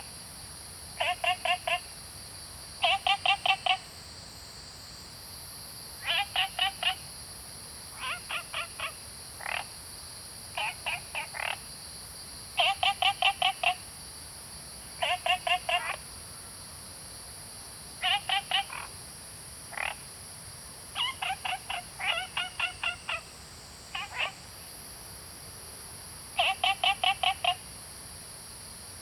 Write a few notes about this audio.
Frogs chirping, Cicada sounds, Birds singing, Small ecological pool, Zoom H2n MS+XY